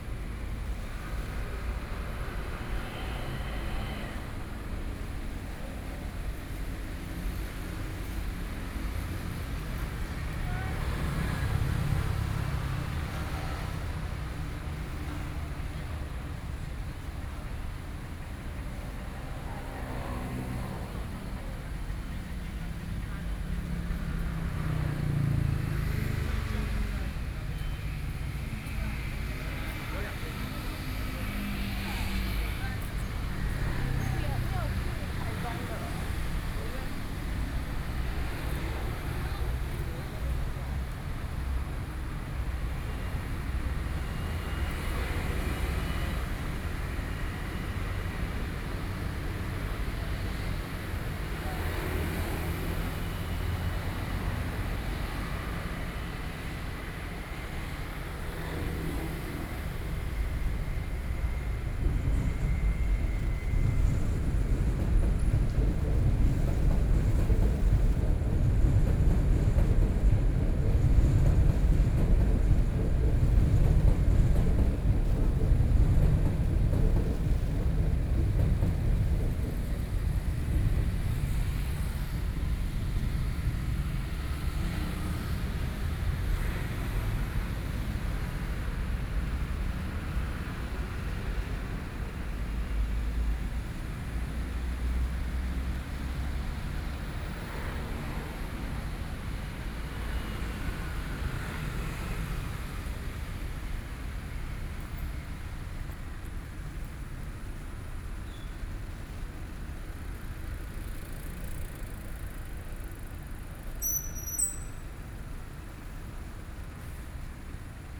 Beitou - Beside the road
Beside the road, Environmental Noise, Sony PCM D50 + Soundman OKM II
Taipei City, Taiwan, 6 August 2013